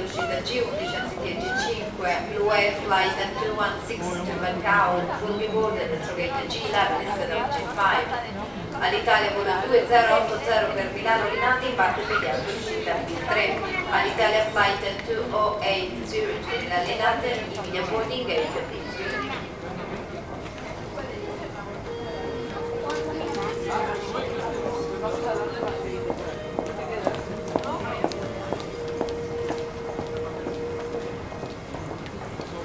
Inside airport terminal - passangers walking by, flight announcement, footsteps, music from restaurant
Fiumicino RM, Italy